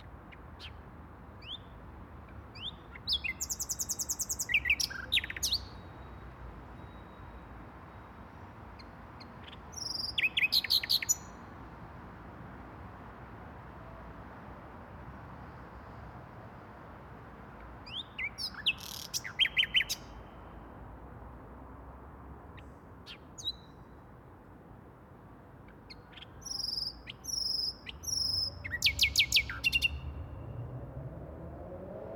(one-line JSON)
{"title": "Waldeckpark, Berlin, Germany - Nightingale in full voice", "date": "2012-04-28 22:55:00", "description": "Berlin's nightingales are a joy to hear when coming home at night. Their songs from the dark interiors of parks, cemeteries, railway edges and playground bushes, are crystal clear even from a distance and they don't seem to mind if you approach more closely to listen. During late April and early May they are in full voice, particularly on warm nights.", "latitude": "52.51", "longitude": "13.40", "altitude": "37", "timezone": "Europe/Berlin"}